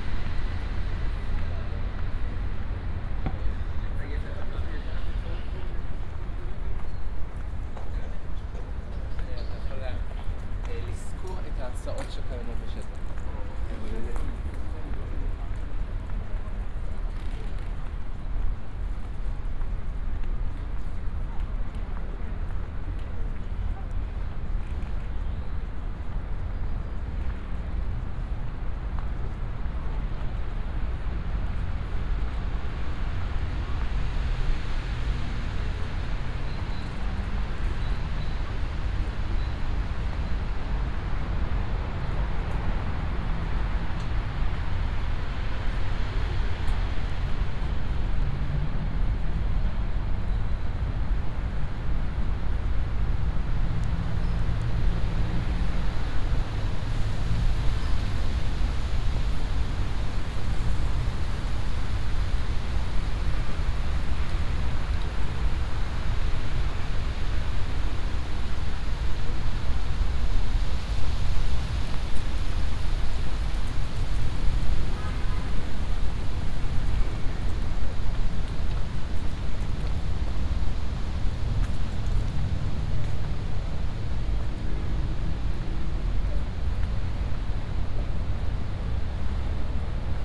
Musée dOrsay, Paris, France - (375) Soundwalk through the south side of the Seine
Soundwalk through the south side of the Seine to the Musée d'Orsay.
recorded with Soundman OKM + Sony D100
sound posted by Katarzyna Trzeciak
Île-de-France, France métropolitaine, France, 25 September